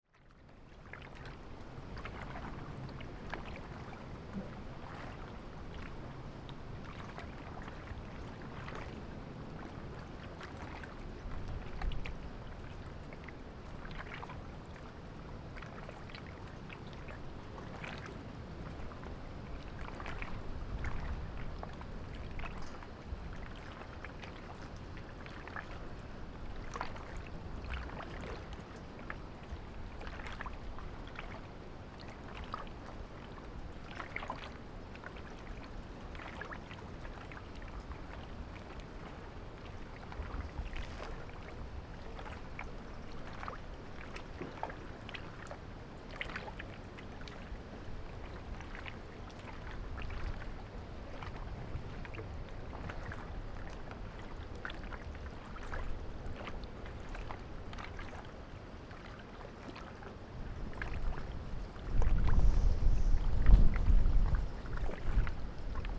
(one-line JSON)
{"title": "赤馬漁港, Xiyu Township - Waves and tides", "date": "2014-10-22 14:31:00", "description": "In the dock, Waves and tides\nZoom H6 +Rode NT4", "latitude": "23.58", "longitude": "119.51", "altitude": "8", "timezone": "Asia/Taipei"}